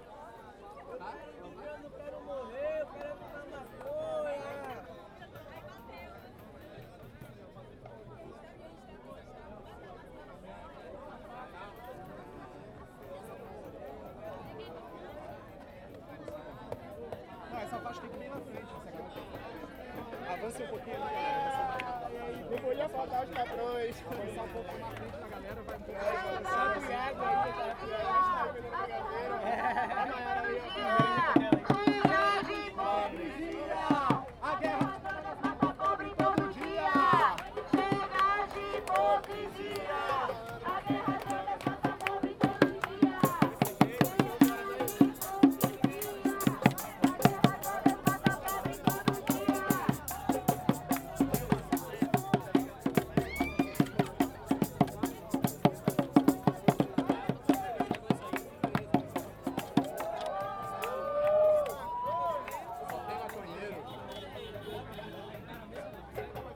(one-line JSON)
{"title": "Salvador, Bahia, Brazil - Marijuana March", "date": "2014-06-16 20:12:00", "description": "In the middle of an extremely peaceful legalise marijuana march in Salvador, Brazil.", "latitude": "-13.01", "longitude": "-38.52", "altitude": "21", "timezone": "America/Bahia"}